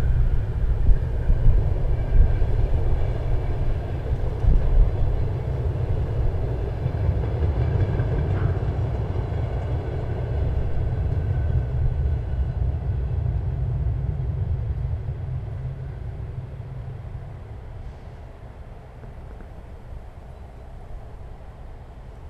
Alexanderplatz - Staircase
Staircase near Berlin Alexanderplatz. Quite windy. Aporee Workshop CTM.
Berlin, Germany